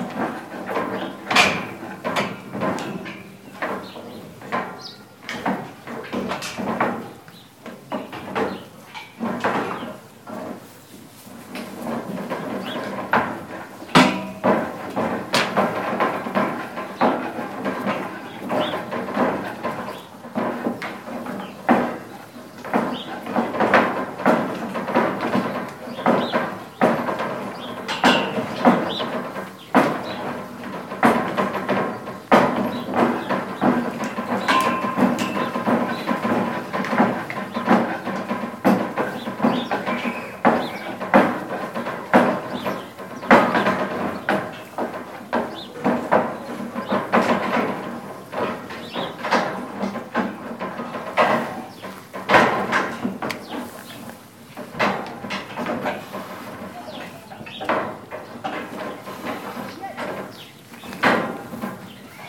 Gut Adolfshof, Hämelerwald - Ziegenstall
Ziegen in ihrem Stall, quicklebendig.
Sony-D100, int. Mic.